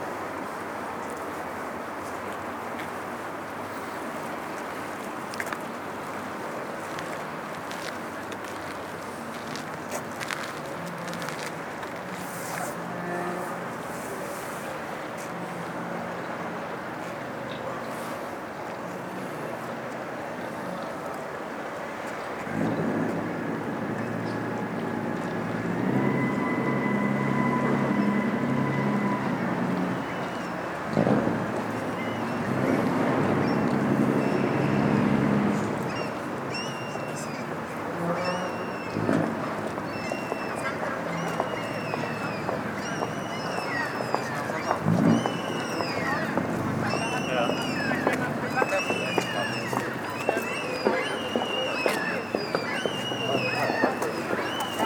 tondatei.de: hamburg, hafencity, museumshafen - museumshafen atmo
wasser, hafen, kai, schiffe, möwen